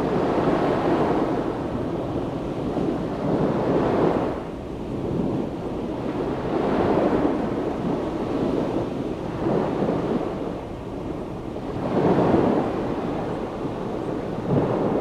Località Baratti, Piombino LI, Italia - Waves of Tyrrhenian sea
Waves rolling onto beach. recorded during the night on the beach of Baratti, using a Tascam DR-70 with its internal microphones.
TOS, Italia, 10 August 2019, ~03:00